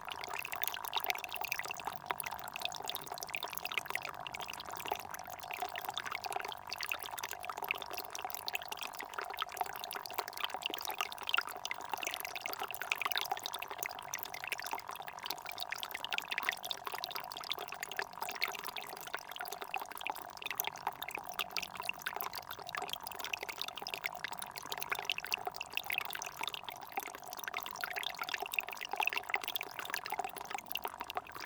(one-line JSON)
{"title": "Source-Seine, France - Seine spring", "date": "2017-07-29 15:45:00", "description": "The Seine river is 777,6 km long. This is here the sound of the countless streamlets which nourish the river. Here the water gushes from a so small hole that it makes sounds like fittings encountering serious problems !", "latitude": "47.50", "longitude": "4.71", "altitude": "429", "timezone": "Europe/Paris"}